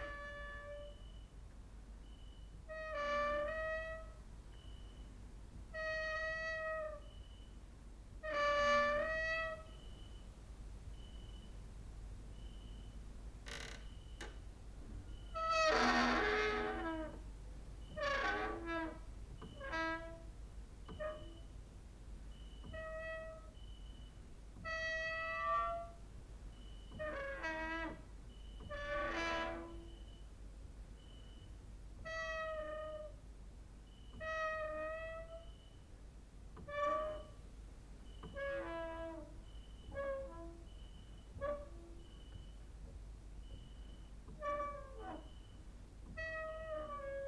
Mladinska, Maribor, Slovenia - late night creaky lullaby for cricket/10
cricket outside, exercising creaking with wooden doors inside
17 August 2012, 23:13